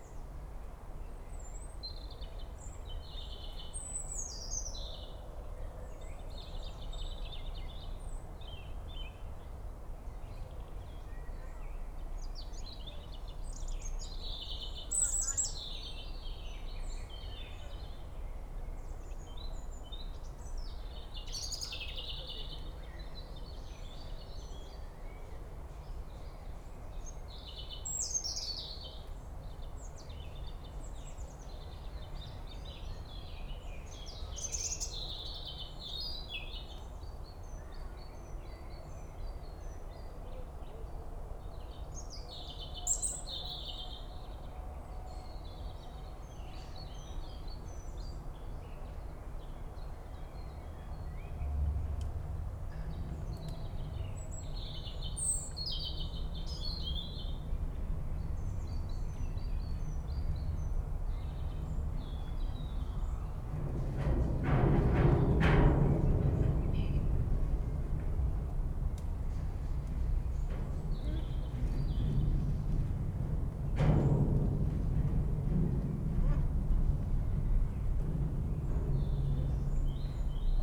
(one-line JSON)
{"title": "Schöneberger Südgelände, Berlin - afternoon park ambience", "date": "2019-04-20 17:30:00", "description": "art & nature park Schöneberger Südgelände, ambience on a warm Saturday afternoon in spring\n(Sony PCM D50, DPA4060)", "latitude": "52.46", "longitude": "13.36", "altitude": "47", "timezone": "Europe/Berlin"}